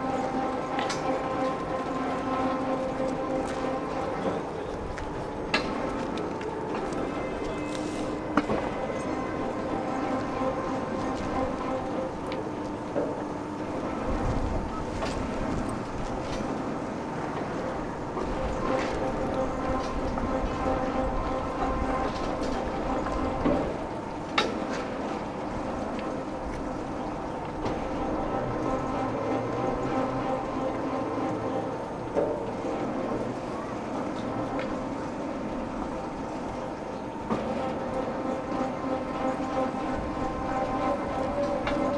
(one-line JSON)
{"title": "Prague, Letna Park, the Metronome", "date": "2010-11-05 14:03:00", "description": "The Metronome in Letna Park is a topsy-turvy pendulum, enthroned over and giving a pace to the city. When in this place they started blowing up a large row of soviet leader statues, one at a time, the free space was quickly seized by skateboarding youth. And the Metronome goes swinging on and on.", "latitude": "50.09", "longitude": "14.42", "altitude": "230", "timezone": "Europe/Prague"}